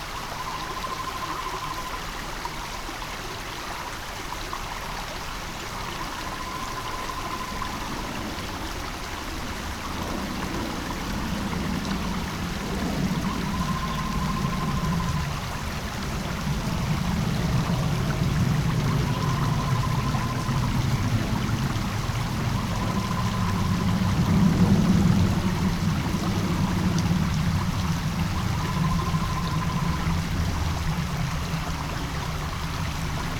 汕頭, Bali Dist. - Farmland irrigation waterway
Farmland irrigation waterway, The sound of water, Bird calls, Aircraft flying through
Sony PCM D50